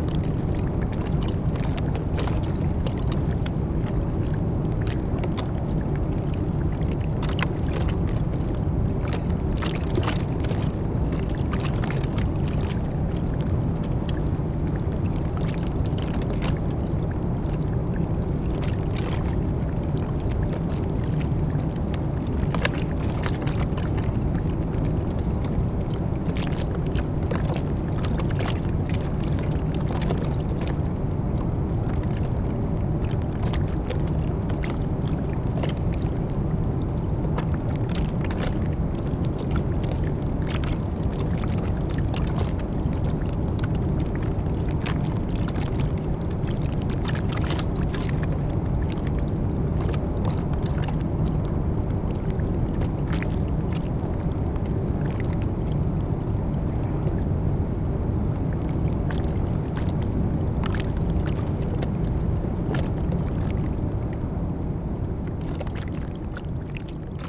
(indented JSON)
{
  "title": "Utena, Lithuania, the last ice - the last ice",
  "date": "2012-03-15 18:15:00",
  "description": "channel at the dam. temperature about 0 degrees of Celsius, evening. The first part of recording is done with contact microphones placed on the tiny ice on the channel bank. The second part is how it sounds in the air. Roaring dam not so far...",
  "latitude": "55.52",
  "longitude": "25.63",
  "altitude": "115",
  "timezone": "Europe/Vilnius"
}